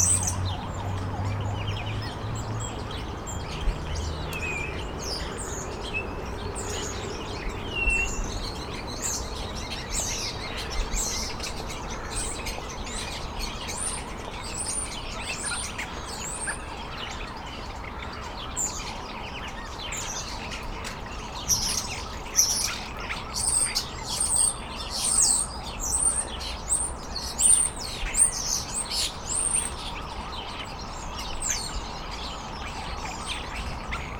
Poznan, Piatkowo distrtict, Chrobrego housing estate - a tree possessed by birds
quite surprised to hear such variety of bird chirps in the middle of the city around cars, apartment buildings and ambulance alarms